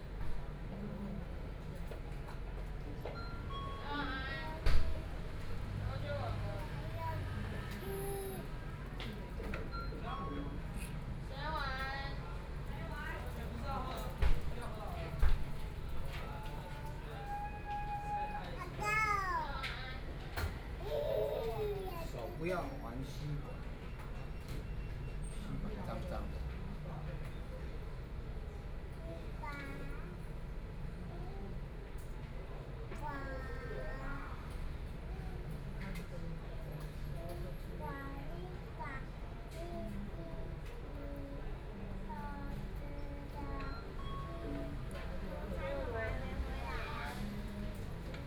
Fuzhong Rd., Banqiao Dist. - In convenience stores
Sitting inside a convenience store, Binaural recordings, Zoom H6+ Soundman OKM II
New Taipei City, Taiwan, November 2013